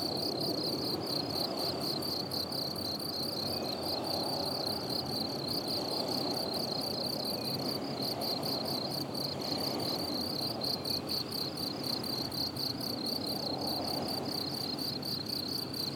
La Flotte, France - Grasshoppers
Grasshoppers singing in the pastures, with distant sound of the sea and a plane passing.